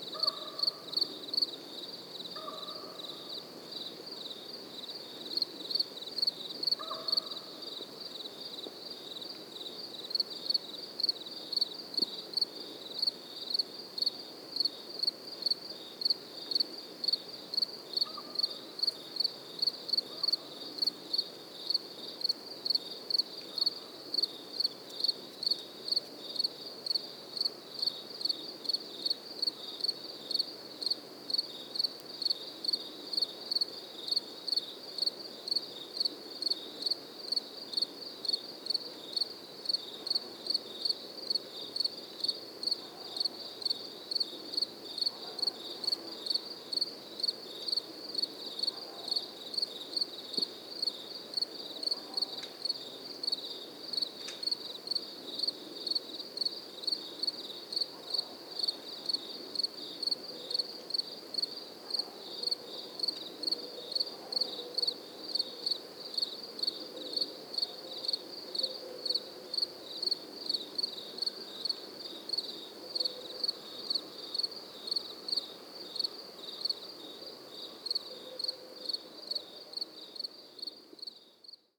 Helliwell Park, Hornby Island, British Columbia, Canada - Grasshoppers and other summer insects
Grasshoppers etc in the Helliwell meadow. Telinga stereo parabolic mic and Tascam DR680mkII recorder
BC, Canada, 9 August 2015